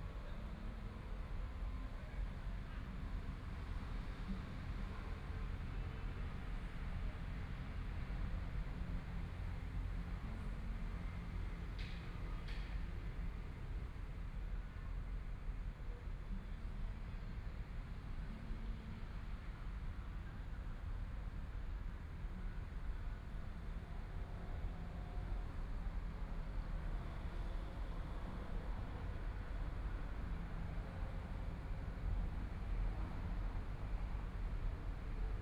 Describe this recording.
The crowd, The distance of the Buddhist Puja chanting voice, Construction noise, Binaural recordings, Zoom H4n+ Soundman OKM II